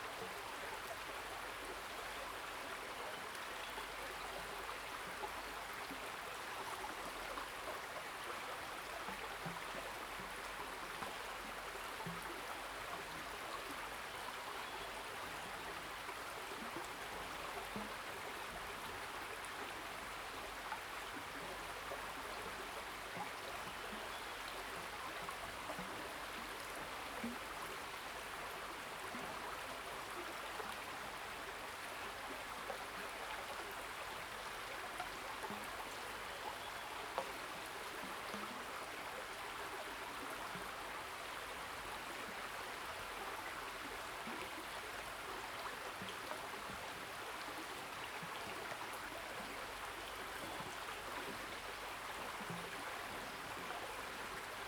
Zhonggua Rd., Puli Township - On the river bank
Stream, River and flow
Zoom H2n MS+XY
Nantou County, Taiwan, 28 April 2016, 09:51